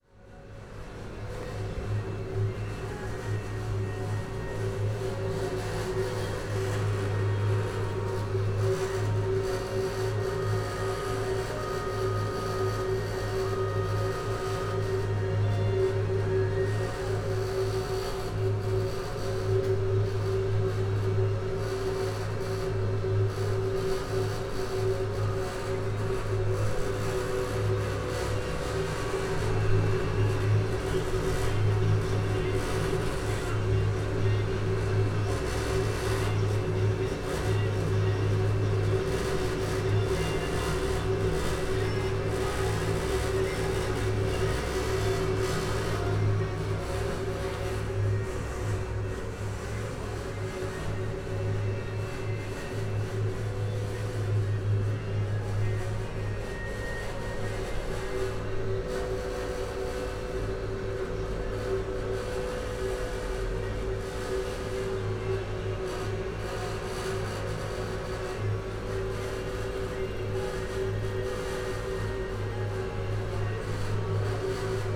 Maribor, Slovenska ulica, laundry - cleaning machines
recorded at the open window of a laundry, noisy cleaning machines from the laundry
(SD702, DPA4060)
Maribor, Slovenia, 3 August, ~12:00